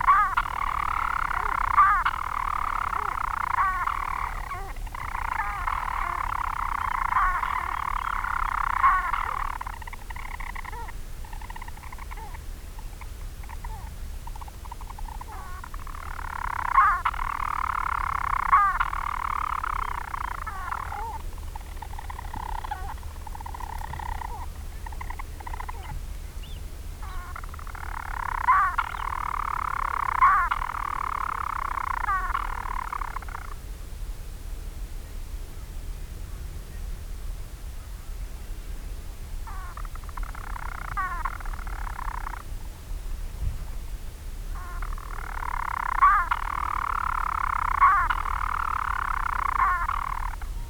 Marloes and St. Brides, UK - european storm petrel ...
Skokholm Island Bird Observatory ... storm petrel singing ..? birds nest in chambers in the dry stone walls ... the birds move up and down the space ... they also rotate while singing ... lots of thoughts that this was two males in adjacent spaces ... open lavalier mics clipped to a sandwich box ... on a bag close to the wall ...